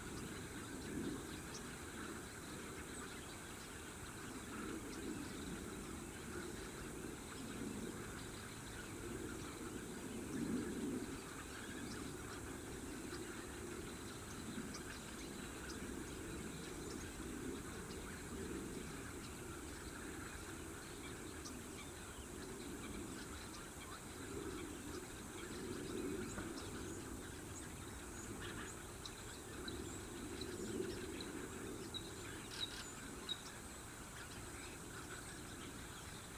Hlohovec District Slovakia - vtáctvo pri váhu
Slovensko, European Union, March 2013